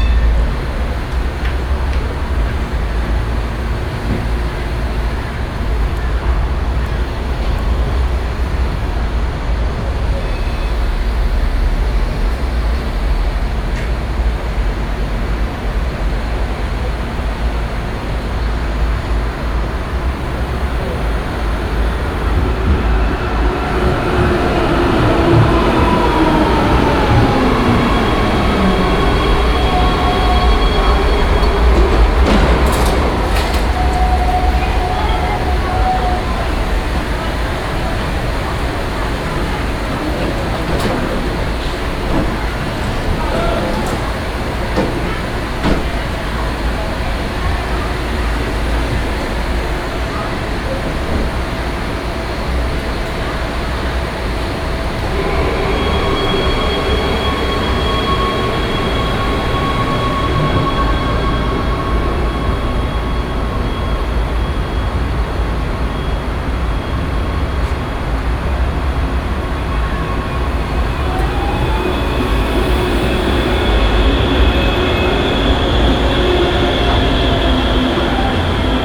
Bahnhofsvorstadt, Bremen, Deutschland - bremen, main station place, tram station
At the tram station place before the bremen main station, The sound of several trams coming in, stopping and leaving the station again.
soundmap d - social ambiences and topographic field recordings
Bremen, Germany, 13 June 2012